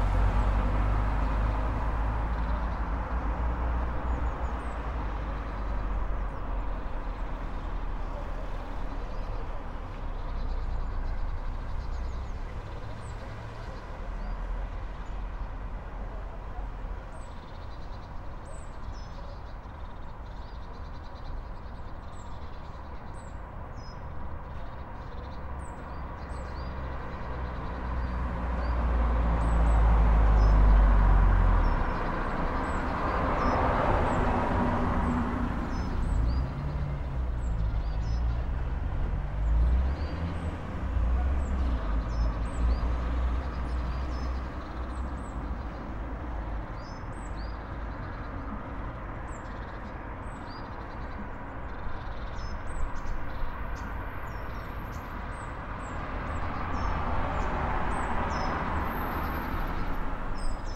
Suburban Manchester
I wish the cars would stop, so that I can enjoy the birdsong...